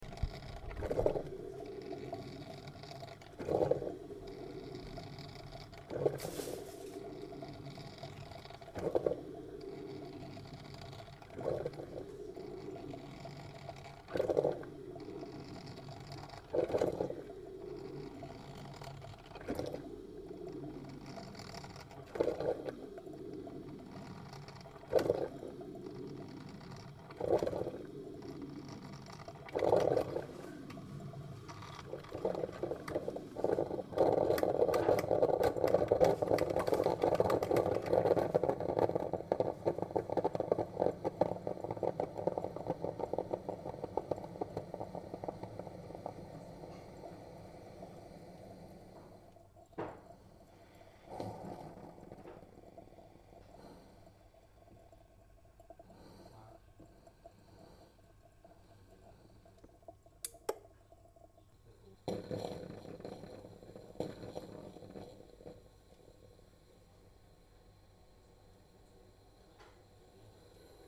Haltern - Coffee machine
The lovely sound of stertorousness of the coffee machine in the morning.